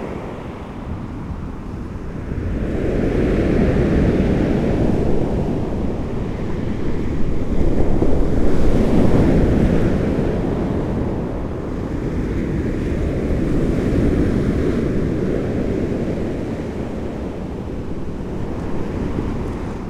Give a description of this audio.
A gusty morning over a receding tide on the shingle beach. This is another experiment with longer recordings.